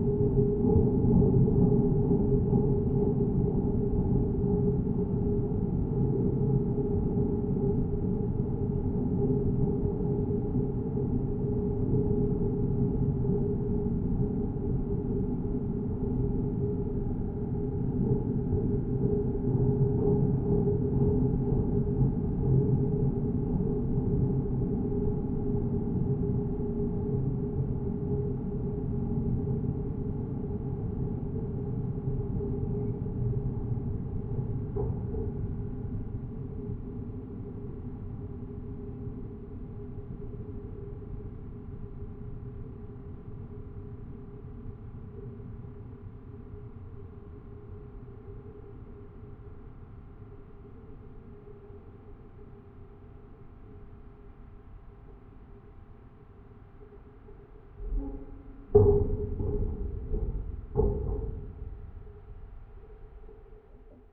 Railway Metal Bridge over the river Weser, Thünder, Lower Saxony, Germany - PASSING BY TRANSPORT TRAIN (Recorded Through The Metallic Construction)
The passing by train was recorded through the metallic construction beside the railroad. The microphone was attached through the magnetic contact, which was connected on the metallic construction 5-6m away from the railroad.
Niedersachsen, Deutschland, 9 June 2021, ~19:00